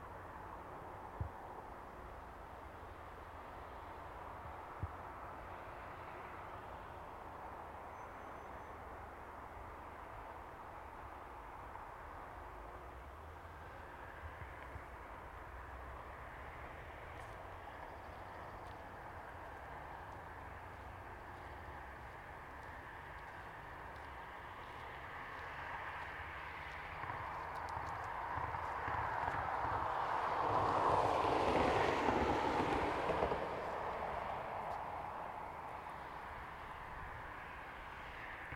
Ul. Ljudevita Gaja, Gojanec, Croatia - Varazdin bypass
Cars driving by on a Varaždin bypass road. A person walking on a gravel road. Recorded with Zoom H2n (MS, handheld).